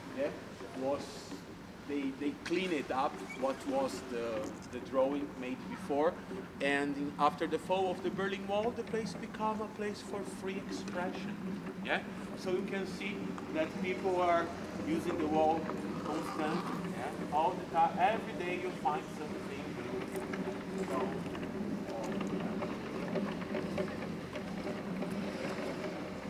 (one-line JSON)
{"title": "Prague, guided tour passing John Lennon Wall", "date": "2010-11-04 15:39:00", "description": "John Lennon Wall in Malastrana, a colourful and well visited place. A guided tourist tour comes rolling by on android walk-without-moving devices and gets a short explanation while trying not to fall off the vehicles.", "latitude": "50.09", "longitude": "14.41", "altitude": "199", "timezone": "Europe/Prague"}